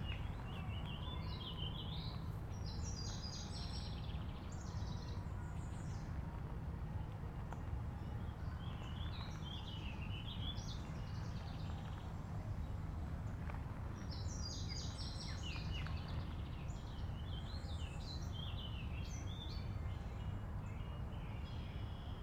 Washington Park, South Doctor Martin Luther King Junior Drive, Chicago, IL, USA - Summer Walk 5
Recorded with Zoom H2. An Interactive walk through Washington Pk.